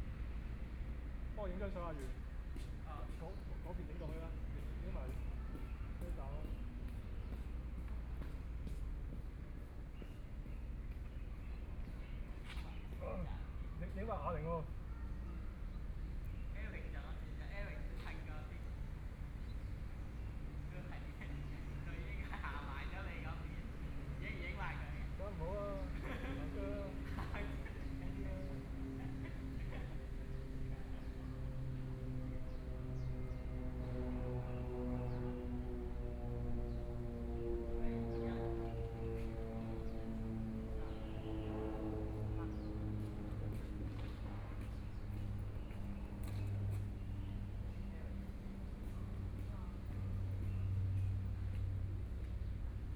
Ciaotou Sugar Refinery, Kaohsiung City - In the Square
In the Square